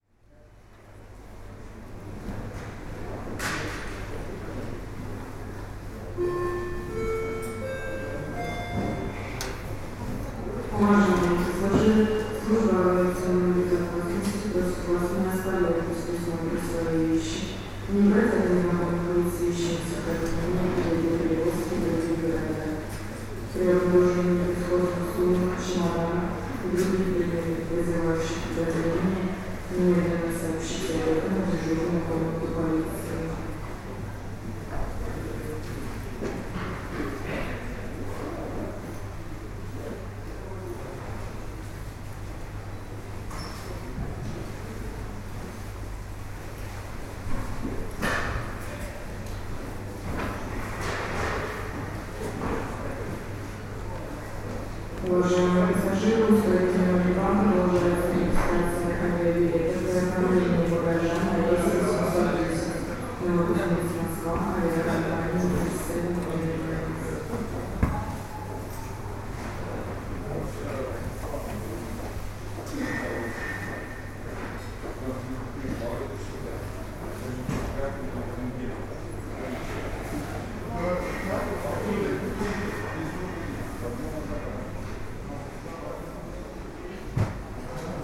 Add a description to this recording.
Announcement in aeroport in Novokuznetsk (Western Siberia)